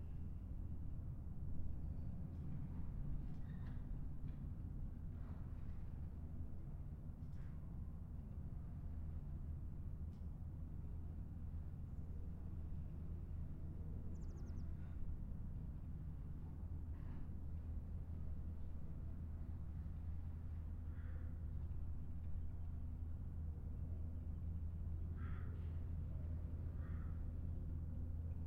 Rue Cardinale, Aix-en-Provence, Frankreich - Hotel Cardinal, backyard, quiet morning and churchbells
Soundscape of the hotels backyard, recorded on the windowsill of the bathroom. Sounds of birds, something that sounds like a vacuum cleaner, water running down a drain, a car, finally the bells of the nearby church. Binaural recording. Artificial head microphone set up on the windowsill of the bathroom. Microphone facing north. Recorded with a Sound Devices 702 field recorder and a modified Crown - SASS setup incorporating two Sennheiser mkh 20 microphones.